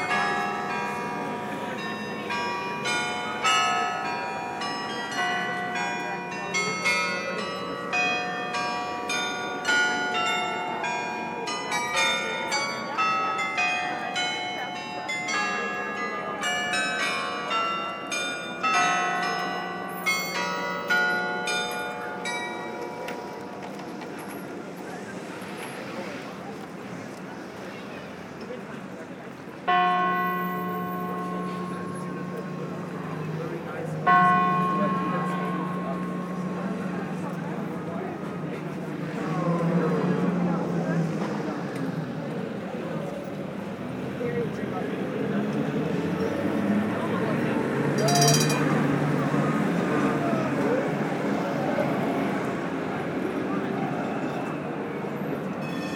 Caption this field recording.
Lively street ambiance into one of the main commercial street of the center of Amsterdam.